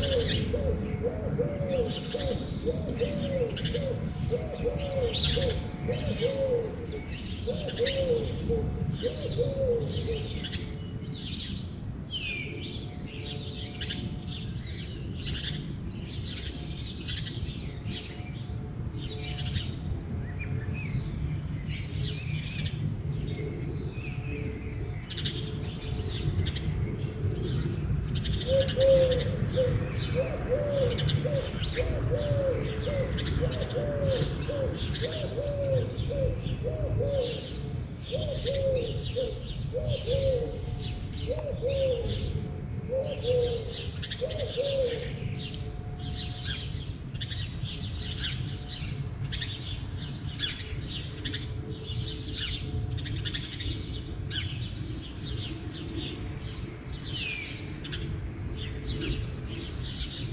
Places where students live are called "kots" in belgian patois. These are small apartment, on wide areas. Very quiet on the morning, very active on the evening, this is here an early morning, so quite, with birds everywhere.
Ottignies-Louvain-la-Neuve, Belgium, 18 March 2016, 07:20